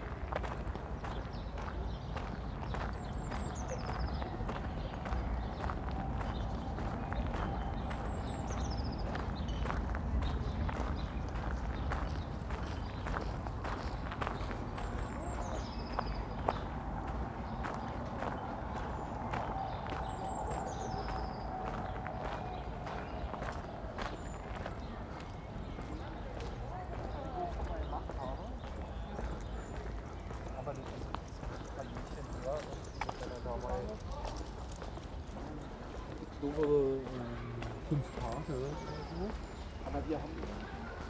Bürgerpark Pankow (Berlin), Berlin, Germany - Pankow Soundwalks anniversary in Covid-19 times: Extract 6 Traffic lights and the walk into Pankow Bürgerpark
Extract 6: Traffic lights and the walk into Pankow Bürgerpark. The 5 Pankow Soundwalks project took place during spring 2019 and April 27 2020 was the first anniversary. In celebration I walked the same route starting at Pankow S&U Bahnhof at the same time. The coronavirus lockdown has made significant changes to the soundscape. Almost no planes are flying (this route is directly under the flight path into Tegel Airport), the traffic is reduced, although not by so much, and the children's playgrounds are closed. All important sounds in this area. The walk was recorded and there are six extracts on the aporee soundmap.
Deutschland, 27 April, ~19:00